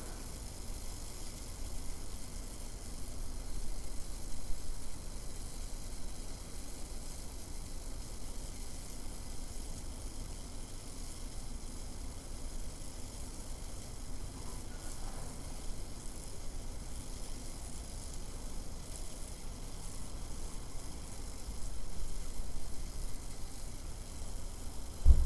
Via Nenni Pietro, Forte dei Marmi LU, Italia - Electric line noise in a wet day
Noise of the electric high-tension line over the school in a wet day, Caranna, Forte dei Marmi, Versilia, Italy
Recorded with a Tascam DR-05 V.2